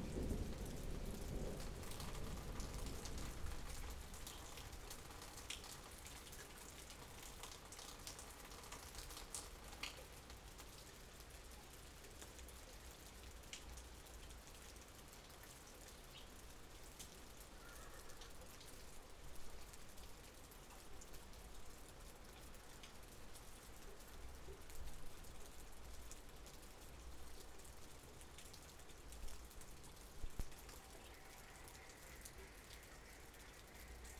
Chem. des Ronferons, Merville-Franceville-Plage, France - Summer Thunderstorm
Rain, Thunderstorm and animals, Zoom F3 and two mics Rode NT55.